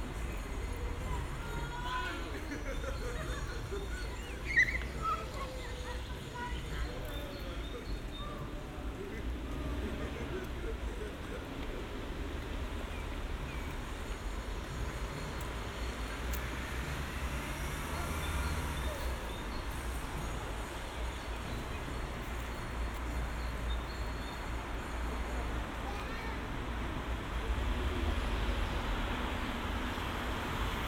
{"title": "Anykščiai, Lithuania, central square hare hare", "date": "2021-06-25 20:00:00", "description": "a pair of krishnaists in a central square of little town", "latitude": "55.53", "longitude": "25.10", "altitude": "78", "timezone": "Europe/Vilnius"}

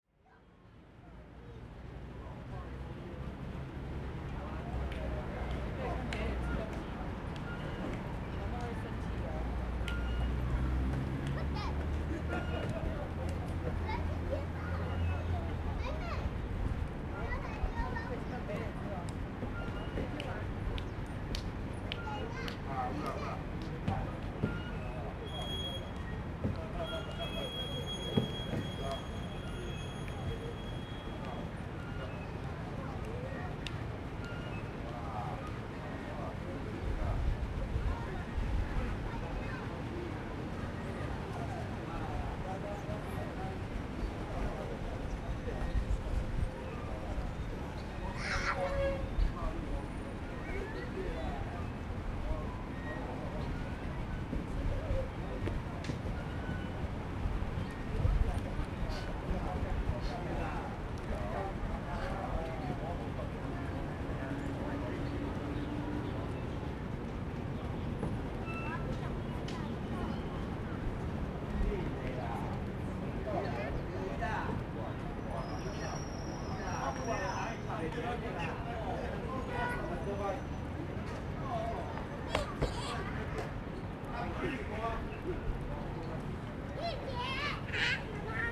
{"title": "Sanmin Park - In the park", "date": "2012-03-29 16:25:00", "description": "Kids are playing games, Old people playing chess chat, Sony ECM-MS907, Sony Hi-MD MZ-RH1 (SoundMap20120329- 30)", "latitude": "22.65", "longitude": "120.31", "altitude": "9", "timezone": "Asia/Taipei"}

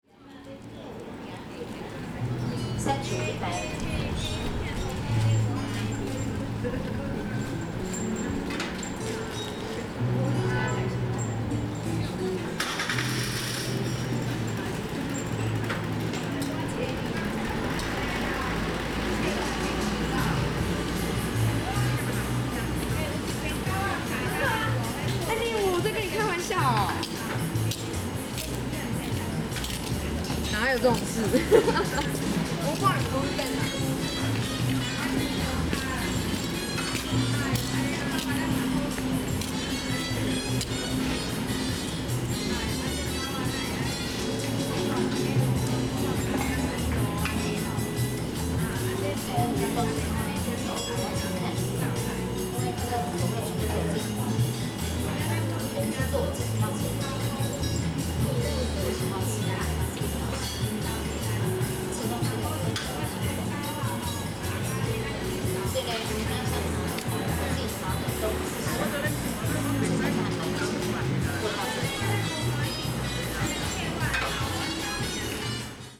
{"title": "碧潭橋, New Taipei City - In the bridge side", "date": "2011-12-20 15:07:00", "description": "In the bridge side, Visitor, Traffic noise\nZoom H4n +Rode NT4", "latitude": "24.96", "longitude": "121.53", "altitude": "20", "timezone": "Asia/Taipei"}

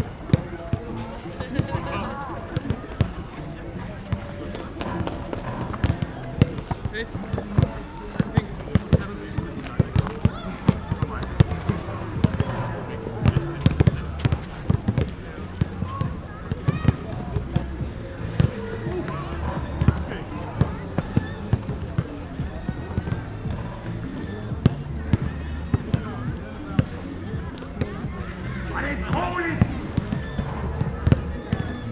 {"title": "Serridslevvej, København, Danmark - sound of playing basketball.", "date": "2022-07-14 14:25:00", "description": "Sound of playing basketball. Intens bumping of ball like big raindrops. recorded with Zoom h6. Øivind Weingaarde.", "latitude": "55.71", "longitude": "12.57", "altitude": "14", "timezone": "Europe/Copenhagen"}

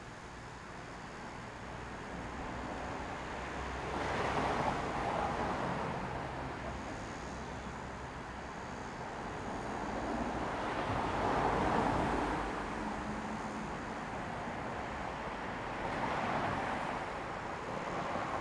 {"title": "Avalon Rd, Lawrence, Kansas, USA - Avalon Apartments", "date": "2022-08-12 10:17:00", "latitude": "38.97", "longitude": "-95.26", "altitude": "309", "timezone": "America/Chicago"}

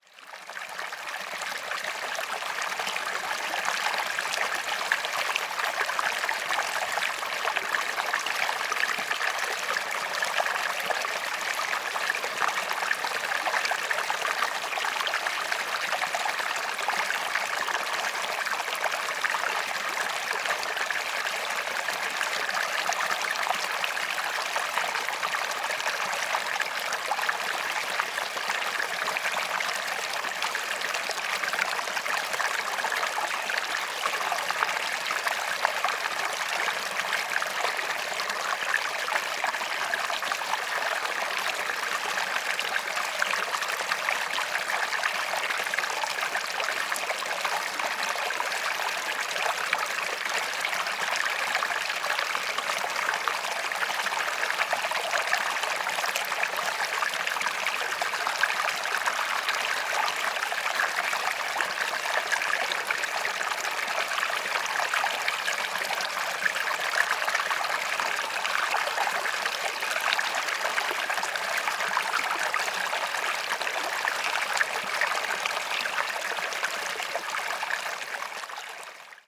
{"title": "Pedras Boroas do Junqueiro - Riacho - River Stream - Pedras Boroas do Junqueiro - Arouca Geopark", "date": "2020-10-16 14:35:00", "description": "Place: Pedras Boroas do Junqueiro - Geopark - Portugal\nRecorder: Olympus LS-P4\nSituation: Standing by the river - Autum afternoon, mild wind\nThe recorder uses a three mic built-in system, I aplied a windshield (Gutmann) to cut the wind interference.", "latitude": "40.87", "longitude": "-8.26", "altitude": "960", "timezone": "Europe/Lisbon"}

